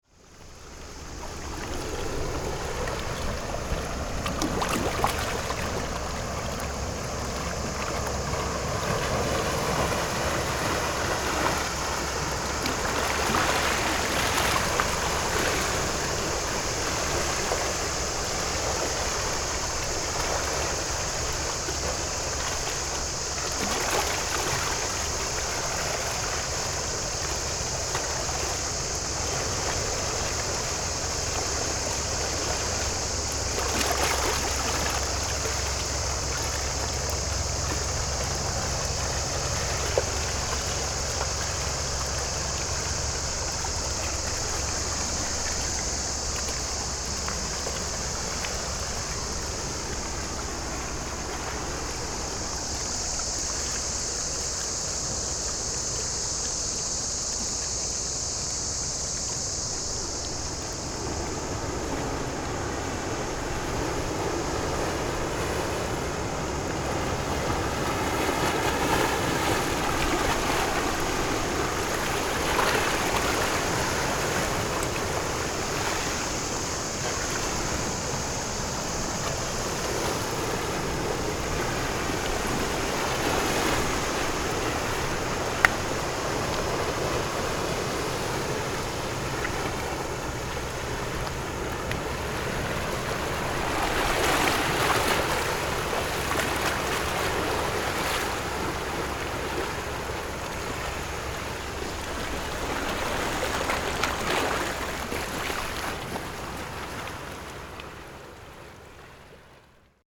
萊萊地質區, Gongliao District - Rocks and waves
Rocks and waves
Zoom H6 MS+ Rode NT4